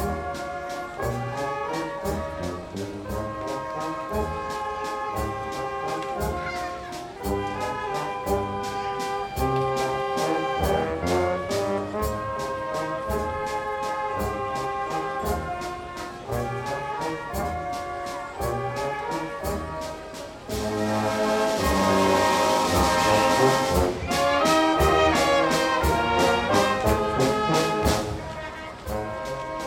Pag, Gradska Glazba 2008
people on main town square are listening and youngster are playing around...